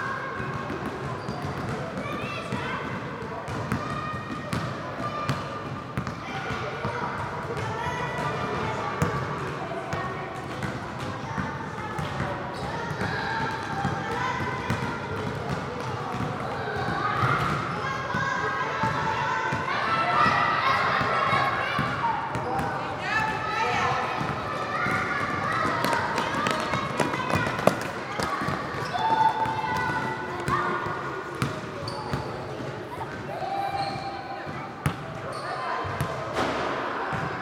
Rue Théophile Delbar, Waterloo, Belgique - Basket ball training for kids in a sporting hall.
Tech Note : Sony PCM-M10 internal microphones.
7 October 2022, 18:00